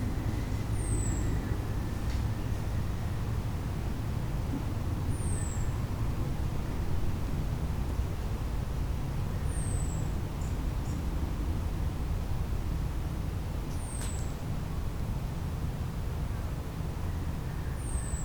burg/wupper, burger höhe: evangelischer friedhof - the city, the country & me: protestant cemetery
birds, traffic noise of L 407, man cutting bushes
the city, the country & me: july 23, 2012